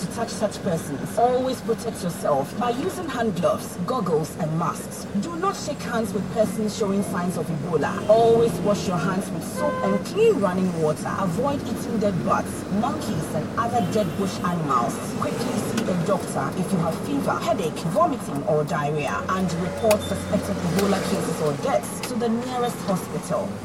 {"title": "Airport City, Accra, Ghana - In a taxi", "date": "2014-08-27 12:00:00", "description": "This was recorded using a Sony PCM M-10 while in traffic near the Accra Airport. I changed the mic sensitivity halfway through, so edited the audio a little post-recording to make it even. Towards the end you can hear a announcement from the Ministry of Health cautioning listeners about Ebola. First time aporee map poster.", "latitude": "5.63", "longitude": "-0.18", "altitude": "51", "timezone": "Africa/Accra"}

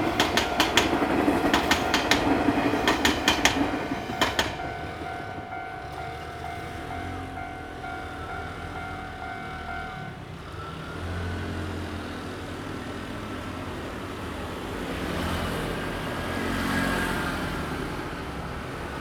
{"title": "Changshun St., Changhua City - On the railroad crossing", "date": "2017-02-15 14:38:00", "description": "On the railroad crossing, The train runs through, Traffic sound\nZoom H2n MS+XY", "latitude": "24.09", "longitude": "120.55", "altitude": "24", "timezone": "GMT+1"}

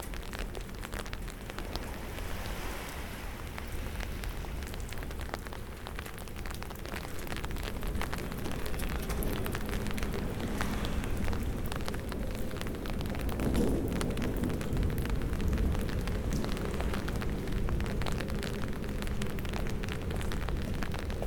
{"title": "Sestri Levante, Metropolitan City of Genoa, Italie - Rain and thunderstorm and sea at the Bay of Silence", "date": "2016-10-27 22:45:00", "description": "Under an umbrella, in front of the sea. Binaural sound.\nSous un parapluie, en face de la mer. Son pris en binaural.", "latitude": "44.27", "longitude": "9.39", "altitude": "9", "timezone": "Europe/Rome"}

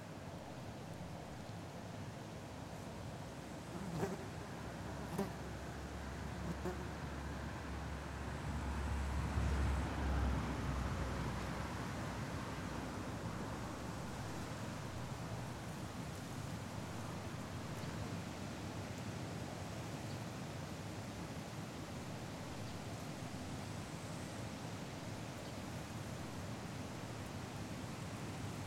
{"title": "Vyžuonos, Lithuania, under the bridge", "date": "2018-07-04 14:30:00", "latitude": "55.58", "longitude": "25.50", "altitude": "93", "timezone": "Europe/Vilnius"}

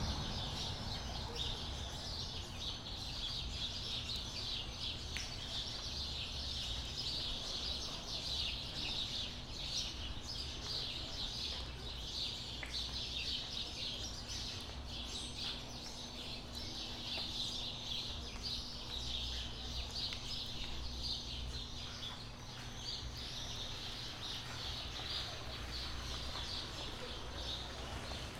{"title": "São Félix, Bahia, Brazil - Pássaros no café", "date": "2014-03-15 05:21:00", "description": "Praça do terminal rodoviária de São Félix com os primeiros sons do dia. Saí de casa de pijama pra realizar esta atividade.\nGravado com o gravador Tascam D40\npor Ulisses Arthur\nAtividade da disciplina de Sonorização, ministrada pela professora Marina Mapurunga, do curso de cinema e audiovisual da Universidade Federal do Recôncavo da Bahia (UFRB).", "latitude": "-12.61", "longitude": "-38.97", "altitude": "9", "timezone": "America/Bahia"}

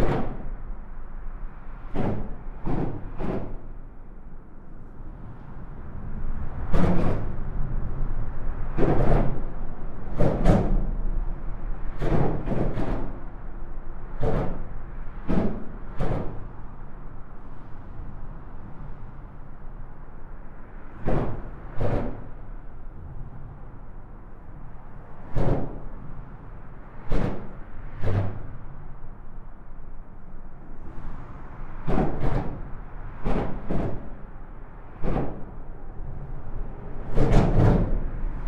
{"title": "Criquebeuf-sur-Seine, France - Highway bridge", "date": "2016-09-19 17:00:00", "description": "An highway bridge is making horrible noises with the above trafic of cars and lorries.", "latitude": "49.31", "longitude": "1.11", "altitude": "6", "timezone": "Europe/Paris"}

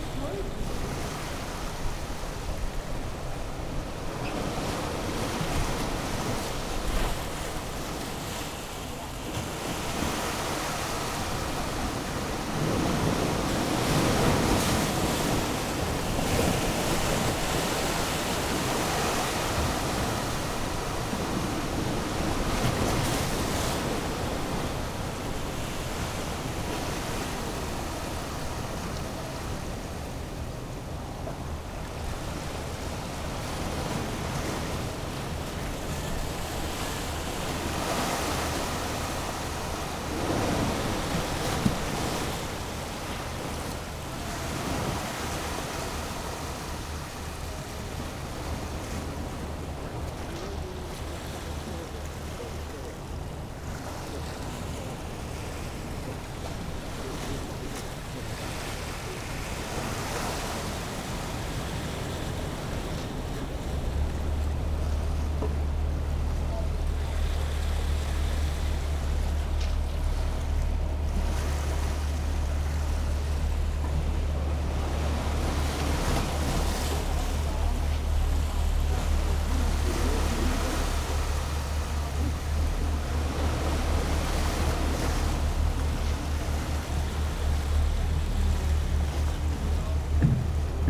{"title": "West Lighthouse, Battery Parade, UK - West Pier Whitby ...", "date": "2019-10-05 10:30:00", "description": "West Pier Whitby ... lavalier mics clipped to bag ... background noise ... works on the pier ...", "latitude": "54.49", "longitude": "-0.61", "timezone": "Europe/London"}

